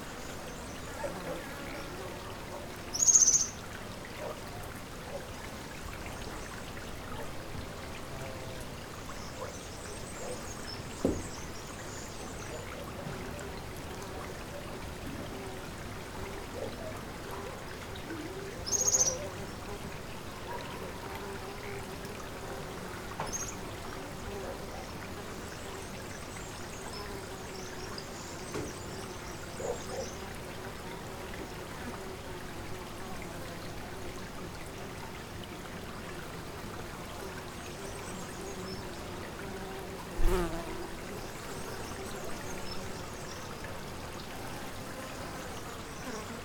Povoa Dos Leiras Entrance Portugal - PovoaDosLeirasEntrance02
small road in Povoa das Leiras, water is running over the cobblestone road, animals behind the metal doors of the buildings
world listening day